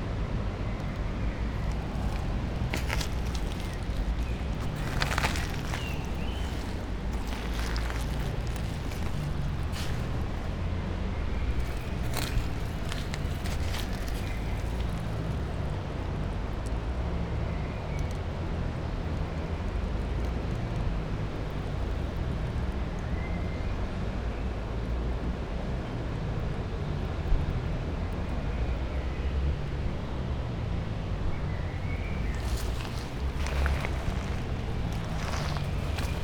several tiny streams of water flows into the river through undergrowth with beautiful fern (summer solstice time), miniature curved sand dunes allover